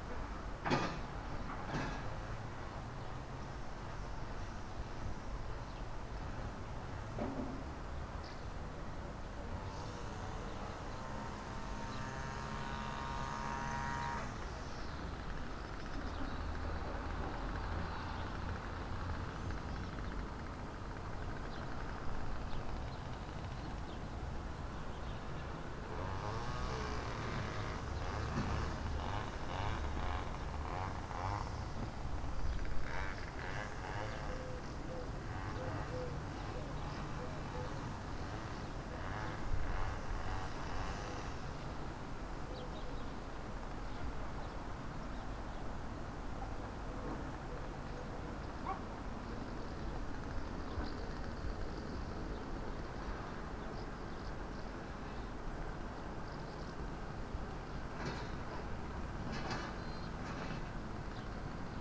Poznan, balcony - crickets and lawn mower
a whole field behind the apartment building swarmed with crickets. a lawn mower to the right. morning city ambience.